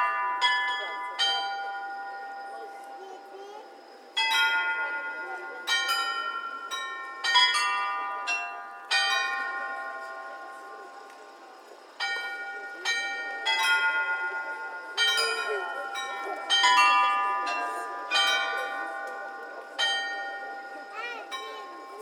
Olomouc, Czech Republic - (-131) Olomuc Astronomical Clock
Olomuc Astronomical Clock at noon recorded with Zoom H2n
sound posted by Katarzyna Trzeciak
September 2016